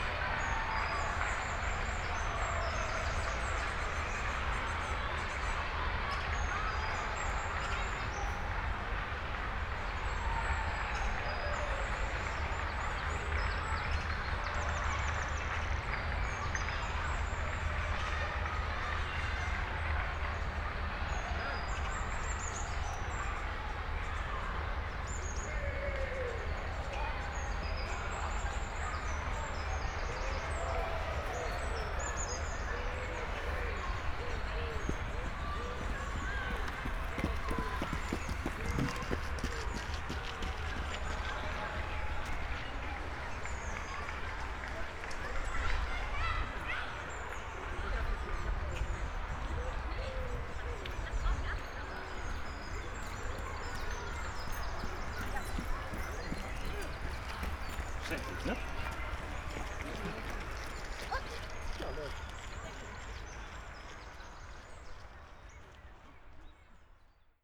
Eversten Holz, Oldenburg - Brunnenlauf, kids marathon
starting...
(Sony PCM D50, Primo EM172)